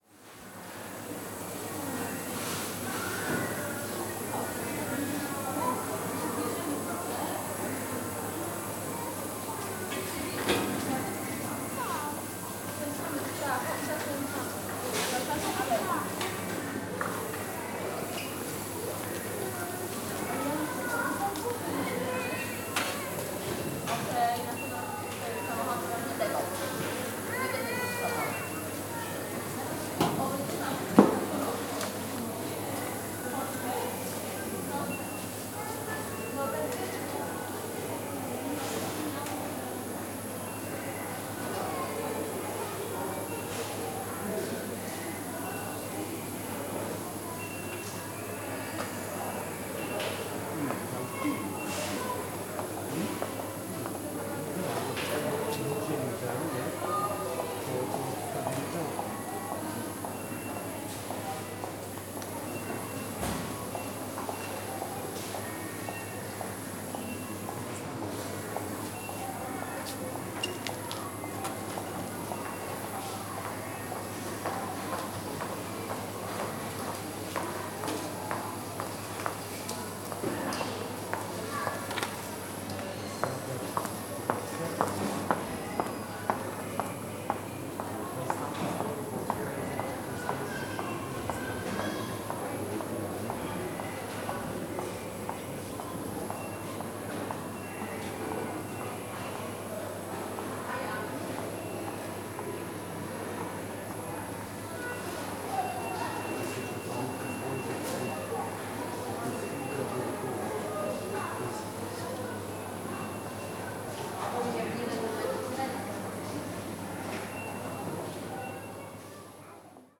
Poznan, Batorego housing estate, Tesco supermarket - cooler repair
two repair man working on a broken cooler. hiss coming out of cut pipes. tools clanks. shoppers, cash registers.